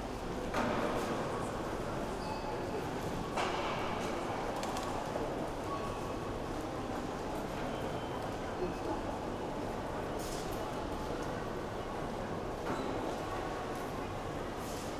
Berlin, Friedrichstr., bookstore - christmas bookstore
surprisingly less crowded bookstore, about 2h before closing time
(Sony PCM D50)